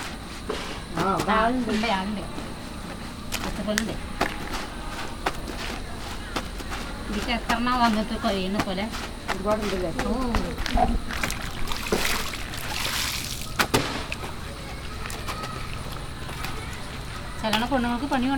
Chethalloor, Kerala, Indien - Cricket being played next to an ancient indian bath
A dry grass field, boys playing cricket, chanting songs from the nearby Shiva- and Kali Temple, a motorbike starting and driving through the playground, a man and than later a women pass by the path in which i am recording next to. When I turn around there is a huge rectangle basin with stairs leading down towards the water on one side and a washing house for women to hide themselves while bathing. Two women washing there clothes besides the washing house.